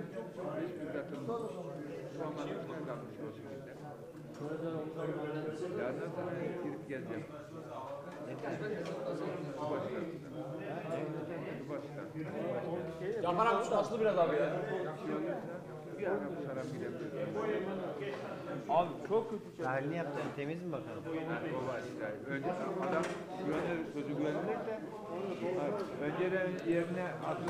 Karaot Village, Torbali İzmir / Turkey - Karaot Village, Torbalı İzmir / Turkey
the coffee shop / kahvehane in a small village, the men are chatting and enjoying their tea